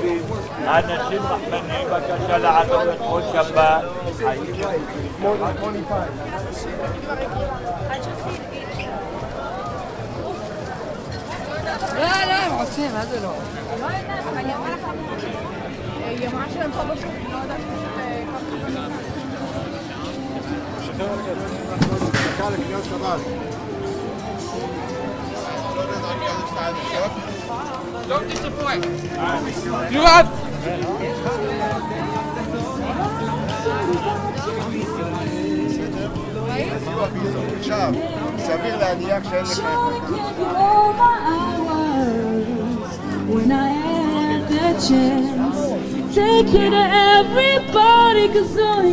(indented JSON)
{
  "title": "Mahane Yehuda Market - Weekend at Mahane Yehuda Market",
  "date": "2021-11-12 11:00:00",
  "description": "Friday morning at Mahane Yehuda Market. Busiest time of the week. Locals as well as tourists are spending time at restaurants and buying fresh products. Peddlers are enthusiastic to sell their goods before the market is closed for Shabbat, shouting over special prices. Chabad followers are offering the men crowd to put Tefillin. Loud music is coming out the stoles and cafes. A panhandler is begging people for some money. A chick is basking singing songs.",
  "latitude": "31.79",
  "longitude": "35.21",
  "altitude": "815",
  "timezone": "Asia/Jerusalem"
}